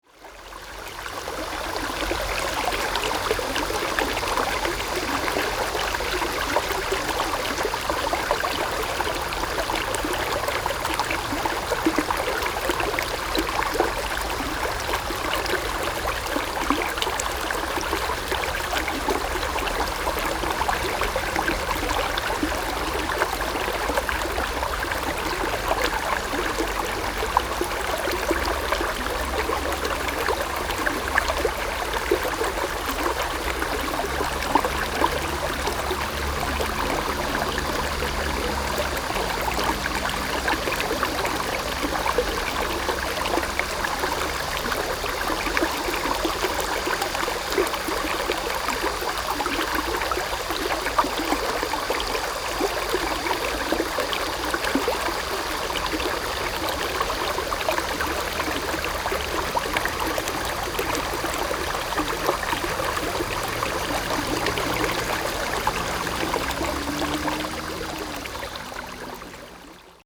Sansia River, New Taipei City - Standing streams
The sound of water, Stream, Cicadas cry, Traffic Sound
Zoom H4n +Rode NT4
8 July 2012, 09:01